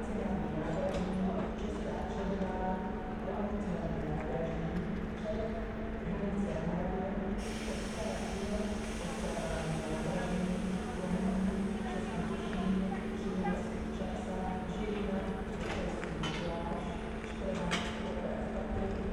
prague main station heard from a moderate distance. a train arrives and is then shut off for the night.
(SD702, BP4025)